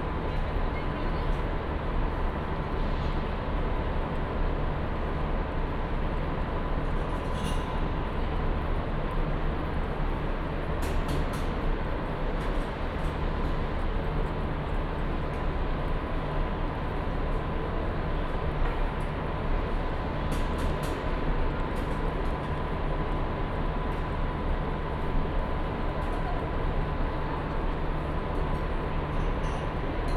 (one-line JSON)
{"title": "Ruzafa, Valencia, Valencia, España - Tren Diesel", "date": "2015-04-20 08:22:00", "description": "Tren Diesel en estación de Valencia. Luhd binaural", "latitude": "39.47", "longitude": "-0.38", "altitude": "18", "timezone": "Europe/Madrid"}